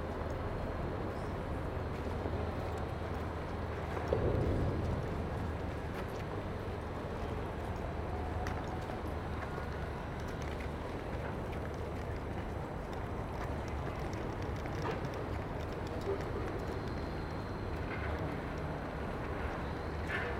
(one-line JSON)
{"title": "SERGELS TORG, Stockholm, Sweden - Everyday city sounds", "date": "2019-02-21 09:49:00", "description": "People walking on Sergels Square. Elementary school class passes by.\nRecorded with Zoom H2n, 2CH setting, deadcat, handheld.", "latitude": "59.33", "longitude": "18.06", "altitude": "25", "timezone": "GMT+1"}